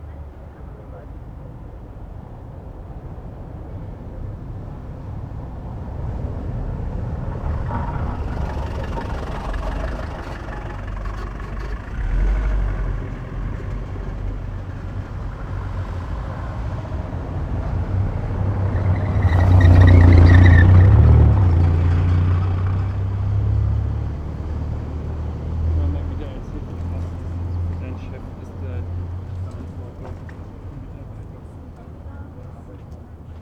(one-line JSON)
{"title": "Berlin: Vermessungspunkt Friedel- / Pflügerstraße - Klangvermessung Kreuzkölln ::: 27.08.2011 ::: 02:35", "date": "2011-08-27 02:35:00", "latitude": "52.49", "longitude": "13.43", "altitude": "40", "timezone": "Europe/Berlin"}